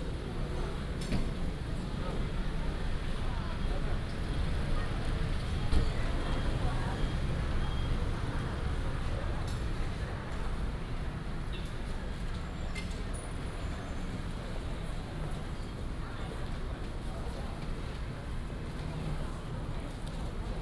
Chungli station, Taoyuan County - Walk into the station
Walk into the station, Station hall, Station Message Broadcast
7 February 2017, 17:27